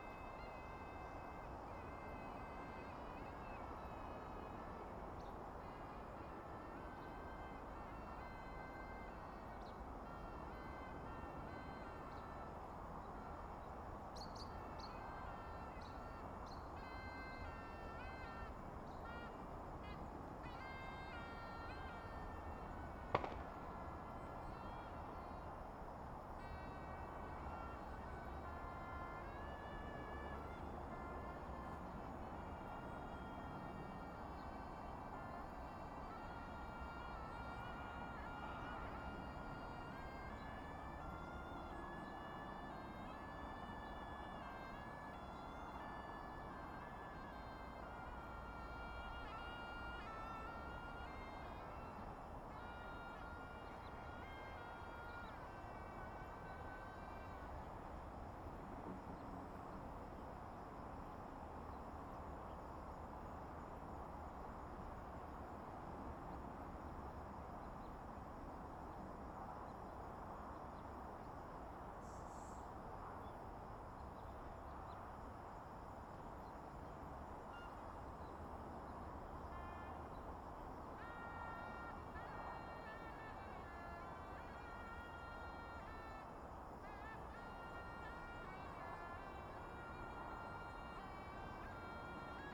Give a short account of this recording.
Near high-speed railroads, traffic sound, birds sound, Suona, Zoom H6XY